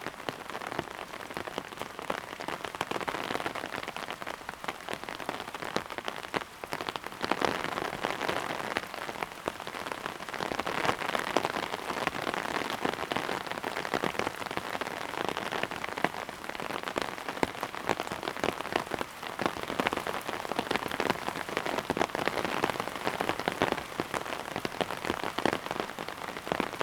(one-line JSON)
{"title": "Ascolto il tuo cuore, città. I listen to your heart, city. Several chapters **SCROLL DOWN FOR ALL RECORDINGS** - Night on terrace storm under umbrella", "date": "2020-08-24 02:17:00", "description": "\"Night on terrace storm under umbrella\" Soundscape\nChapter VXXVII of Ascolto il tuo cuore, città, I listen to your heart, city\nMonday, August 24stth 2020. Fixed position on an internal terrace at San Salvario district Turin, five months and fourteen days after the first soundwalk (March 10th) during the night of closure by the law of all the public places due to the epidemic of COVID19.\nStart at 02:17 a.m. end at 02:36 a.m. duration of recording 18'57''.", "latitude": "45.06", "longitude": "7.69", "altitude": "245", "timezone": "Europe/Rome"}